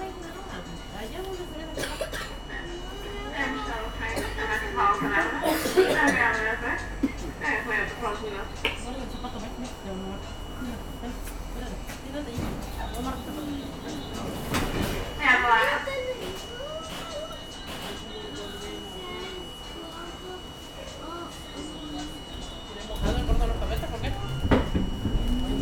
Myrtle Ave, Brooklyn, NY, USA - M Train - Myrtle Wyckoff to Forest Avenue
Sounds from the M Train.
Short ride from Myrtle Wyckoff to Forest Avenue.
5 April 2018, 13:20